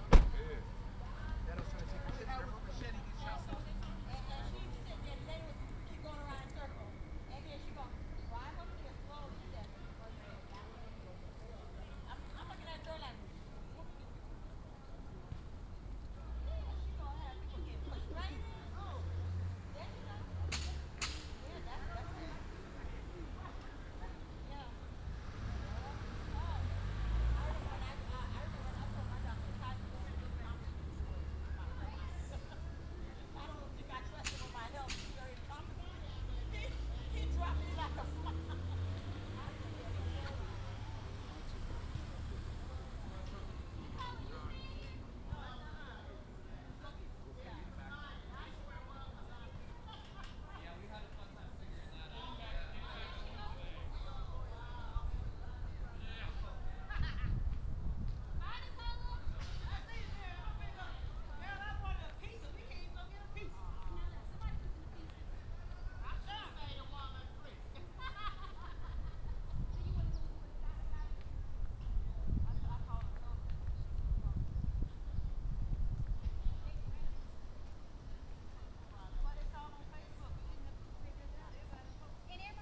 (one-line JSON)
{"title": "3400 Richmond Parkway - Richmond California Crime Scene", "date": "2017-08-02 16:43:00", "description": "There was a high speed chase that ended when the cops pushed the robber's car off the road. The cops had shot and killed the criminal when he came at them with a machete. This was about an hour or two after those events had played out. The crime scene was at the entrance of the apartments, but they had it blocked off all the way up to almost the security booth.", "latitude": "37.99", "longitude": "-122.32", "altitude": "56", "timezone": "America/Los_Angeles"}